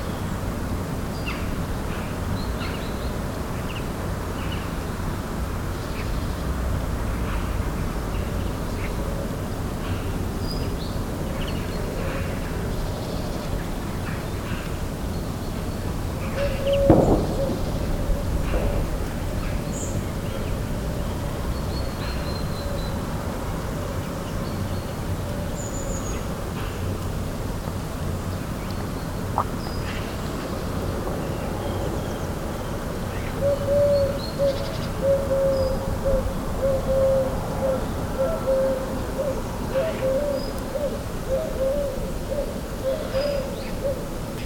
Maintenon, France - Magpies
Birds singing and general ambience in a semi-rural place.
29 December 2015, 11:00